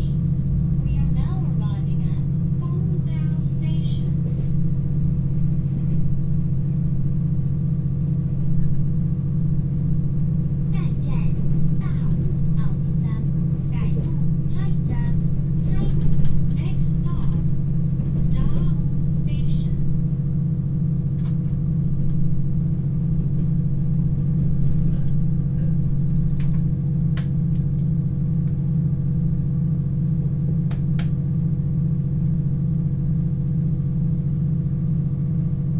Diesel Multiple Unit, In the train compartment
Binaural recordings, Sony PCM D100+ Soundman OKM II